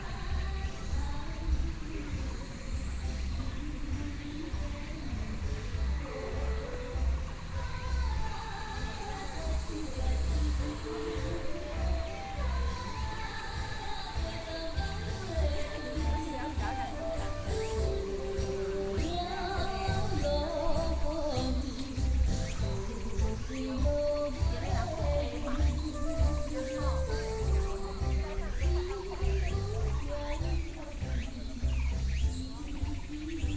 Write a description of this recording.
walking in the Park, birds song, Sony PCM D50 + Soundman OKM II